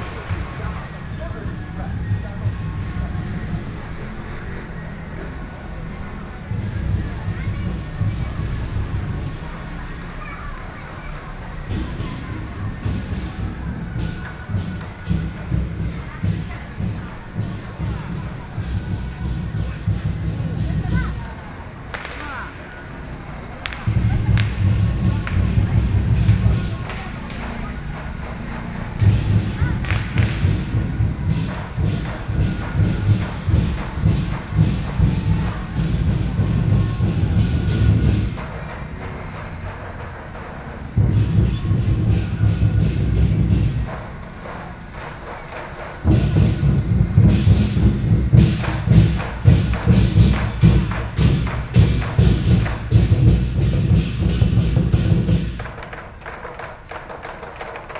Luzhou, New Taipei City - walking in the Street
Traditional temple Festival, Traffic Noise, Binaural recordings, Sony PCM D50 + Soundman OKM II
New Taipei City, Taiwan, 22 October 2013, 17:33